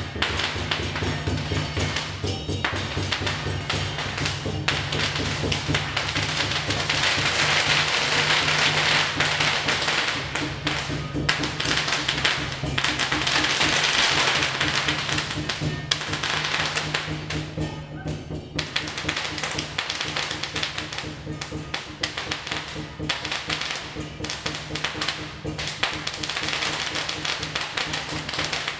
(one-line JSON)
{"title": "大仁街, Tamsui District - Traditional festival parade", "date": "2015-04-12 13:50:00", "description": "Traditional festival parade\nZoom H2n MS+XY", "latitude": "25.18", "longitude": "121.44", "altitude": "45", "timezone": "Asia/Taipei"}